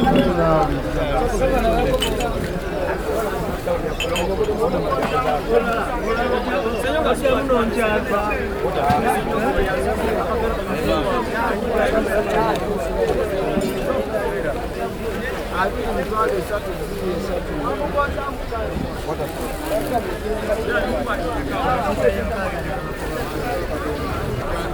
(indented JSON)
{
  "title": "Nakasero Market, Kampala, Uganda - Among the traders...",
  "date": "2010-07-10 07:05:00",
  "description": "An early morning stroll among the vegetable stalls of busy Nakasero Market… it’s hard to make a way through the crowd; many traders display their merchandise on plastic sheets on the floor, man carrying heavy crates and sacks are rushing and pushing their way through…",
  "latitude": "0.31",
  "longitude": "32.58",
  "altitude": "1181",
  "timezone": "Africa/Kampala"
}